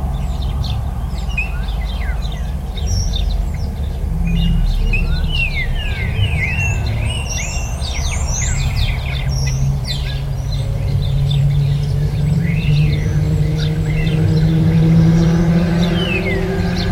Recorded with a pair of DPA 4060s into a Marantz PMD661
Tarragona, Spain, 23 October